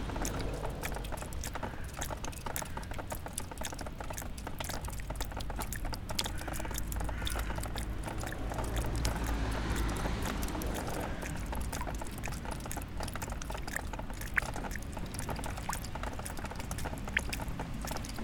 {"title": "M. Valančiaus g., Kaunas, Lithuania - Melting ice dripping", "date": "2021-02-23 14:19:00", "description": "Melting ice dripping from a windowsill of a semi-abandoned industrial building. Recorded with ZOOM H5.", "latitude": "54.90", "longitude": "23.89", "altitude": "26", "timezone": "Europe/Vilnius"}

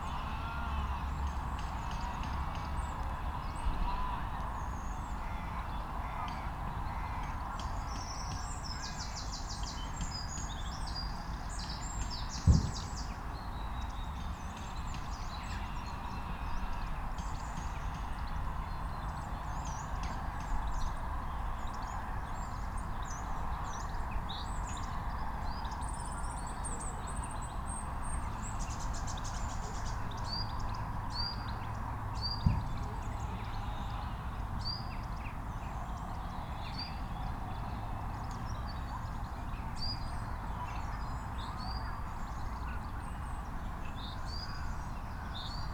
{"title": "Little Garth, Church St, Kirkbymoorside, York, UK - community garden ... kirkbymoorside ...", "date": "2019-03-05 12:15:00", "description": "community garden ... kirkbymoorside ... lavalier mics clipped to sandwich box ... bird calls ... song from ... goldcrest ... blackbird ... song thrush ... robin ... jackdaw ... crow ... wood pigeon ... collared dove ... dunnock ... coal tit ... great tit ... siskin ... chaffinch ... background noise ...", "latitude": "54.27", "longitude": "-0.93", "altitude": "76", "timezone": "Europe/London"}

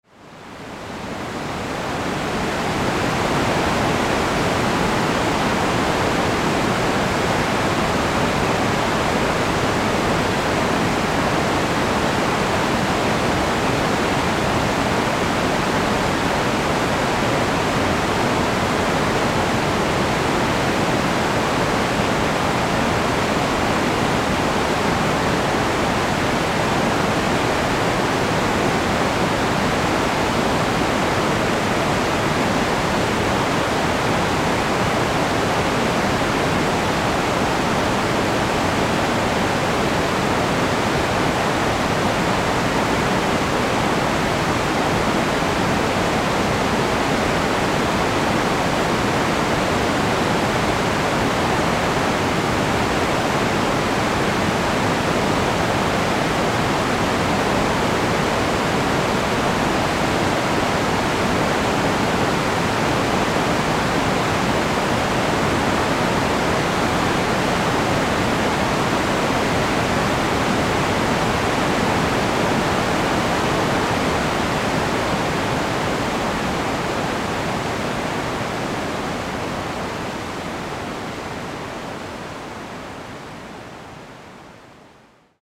{"title": "Le Mas-dAzil, France - Grotte du Mas dAzil", "date": "2018-03-20 14:59:00", "description": "Torrent in a very big cave - Grotte du Mas d'Azil, Ariège, France, Zoom H6", "latitude": "43.07", "longitude": "1.35", "altitude": "394", "timezone": "Europe/Paris"}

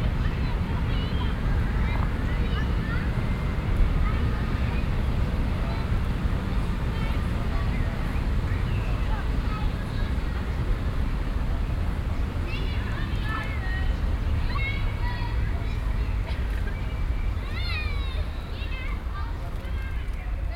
{"title": "cologne, stadtgarten, unter Platane - koeln, stadtgarten, unter platane, nachmittags", "description": "unter grossem baum stehend - baumart: platane hispanicus - stereofeldaufnahmen im juni 08 - nachmittags\nproject: klang raum garten/ sound in public spaces - in & outdoor nearfield recordings", "latitude": "50.94", "longitude": "6.94", "altitude": "52", "timezone": "GMT+1"}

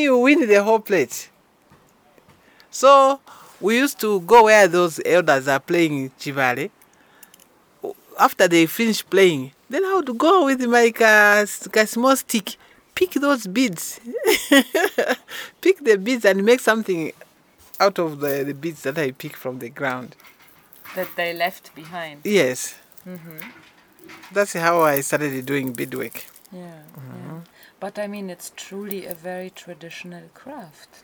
{"title": "Harmony, Choma, Zambia - After the Jibale Game...", "date": "2012-11-14 10:30:00", "description": "Esnart continues telling how she got to start beadwork as a young girl... picking up beads from the ground after the Jibale Game often played by old men in the villages...\nEsnart was the Crafts Manager and Crafts Development Officer at Choma Museum from 1995-2007, trained many people in workshops, organized crafts competitions and assisted in the production of exhibitions.", "latitude": "-16.74", "longitude": "27.09", "altitude": "1263", "timezone": "Africa/Lusaka"}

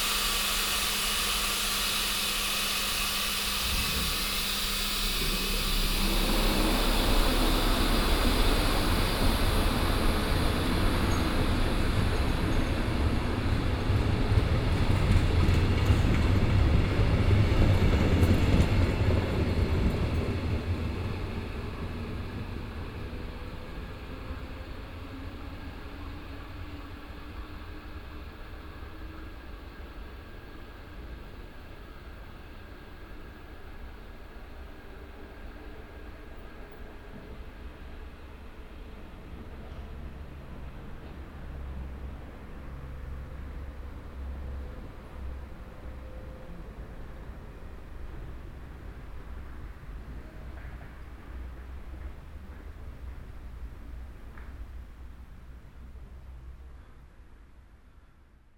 {"title": "rudolstadt, station, regional train", "date": "2011-10-06 16:42:00", "description": "At the station. A regional train destination Grossheringen arrives, stops with a nice pneumatic air pressure sound and departs again.\nsoundmap d - topographic field recordings and social ambiences", "latitude": "50.72", "longitude": "11.34", "altitude": "193", "timezone": "Europe/Berlin"}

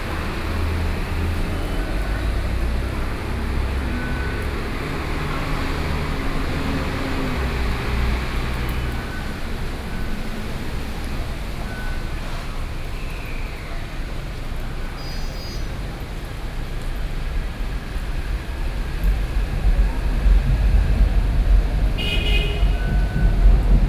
{"title": "Mingde Station, Beitou District - Rainy Day", "date": "2013-08-30 19:16:00", "description": "Station hall entrances, Traffic Noise, Sony PCM D50 + Soundman OKM II", "latitude": "25.11", "longitude": "121.52", "altitude": "13", "timezone": "Asia/Taipei"}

